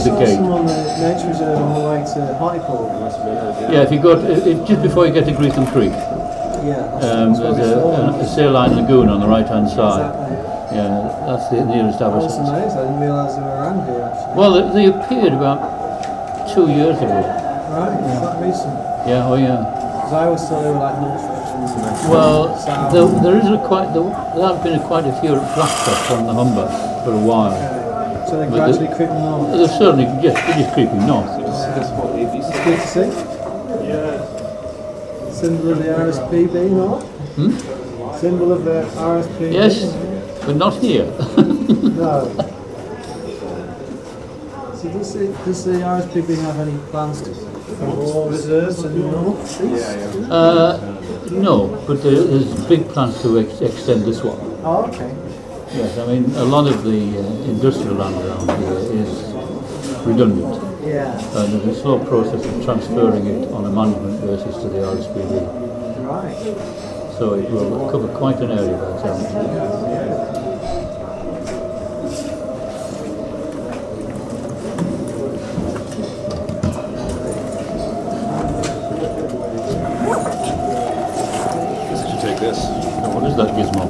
Saltholme Bird Sanctuary
A former industrial site converted into a bird sanctuary, Saltholme is surrounded by the landscape that inspired Ridley Scott's opening sequence of Blade Runner - active and disused chemical plants, ship breakers, and a nuclear power plant.